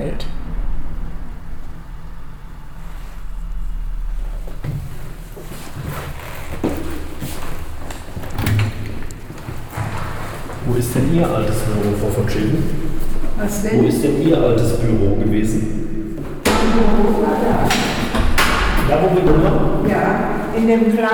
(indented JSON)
{
  "title": "Amtshaus Pelkum, Hamm, Germany - Ilsemarie von Scheven talks local history in situ",
  "date": "2014-11-04 11:15:00",
  "description": "We visit the town hall (Amtshaus) Pelkum with the former city archivist Ilsemarie von Scheven. The 93-year-old guides us through the building along her memories. The staircase and corridors awaken memories; most of the rooms less so; a journey along Ms von Scheven's memories of a time when the archives of the new independent city of Hamm were housed here in the building or rather, were re-created under the careful hands of two, quote von Scheven, \"50-year-old non-specialists\"; a re-creation, literally like a Phoenix rising from the ashes. The women's mission was to \"build a replacement archive for the city\". The town's archives had been burnt down with the town hall in the bombs of the Second World War; the only one in Westphalia, as Ms von Scheven points out.\nWhere the memory leaves us, we explore what can nowadays be found in the building. The head of the city hall himself grants us access and accompanies us.",
  "latitude": "51.64",
  "longitude": "7.75",
  "altitude": "63",
  "timezone": "Europe/Berlin"
}